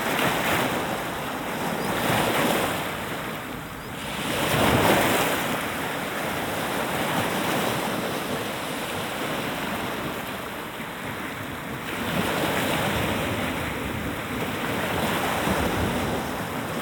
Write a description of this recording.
Cape Zmeinyy. Play of the waves. Мыс Змеиный. Плеск волн.